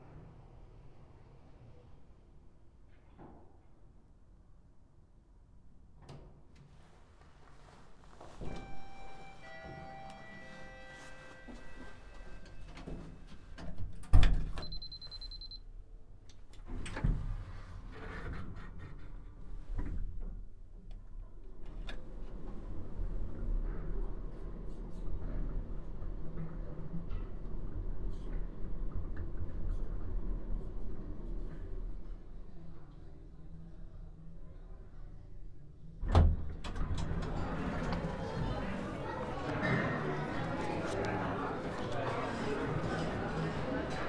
Landstraße, Vienna, Austria - Hotel Lift
Wien, Austria, 22 January, 10:52